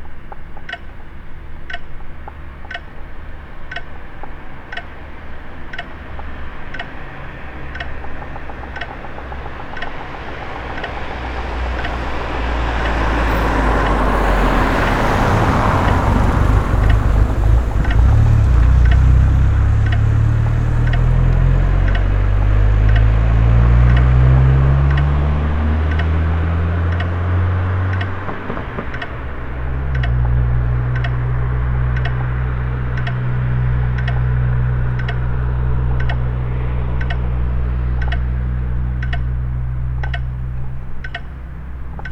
Traffic lights and Gammliahallen PA in background